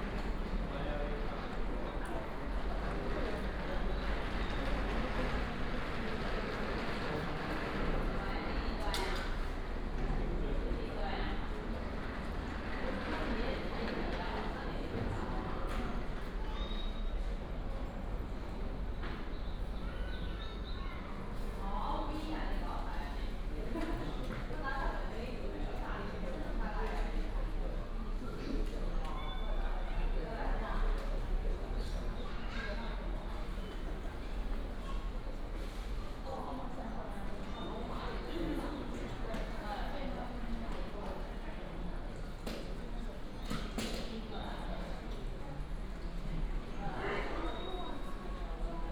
{"title": "新烏日火車站, 台中市烏日區 - Walk at the station", "date": "2017-04-29 12:31:00", "description": "Walk at the station, From the station hall to the station platform, The train runs through", "latitude": "24.11", "longitude": "120.61", "altitude": "26", "timezone": "Asia/Taipei"}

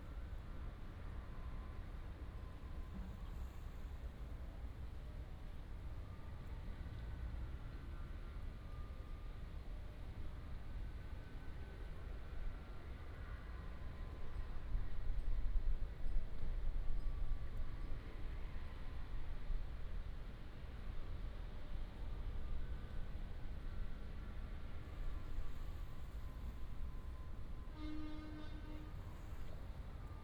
{"title": "通霄鎮, Miaoli County - The town's noon time", "date": "2017-01-19 12:59:00", "description": "The town's noon time, The school bell, rubbish truck, Environmental sound", "latitude": "24.49", "longitude": "120.68", "altitude": "27", "timezone": "GMT+1"}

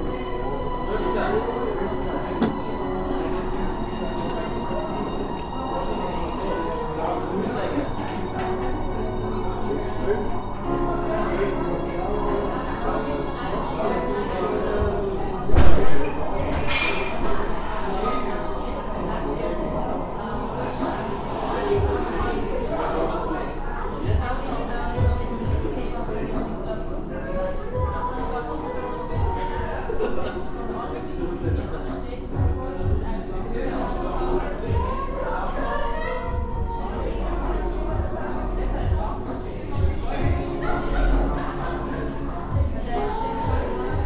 {"title": "Zürich West, Schweiz - 4. Akt", "date": "2014-12-30 23:02:00", "description": "4. Akt, Heinrichstr. 262, 8005 Zürich", "latitude": "47.39", "longitude": "8.52", "altitude": "408", "timezone": "Europe/Zurich"}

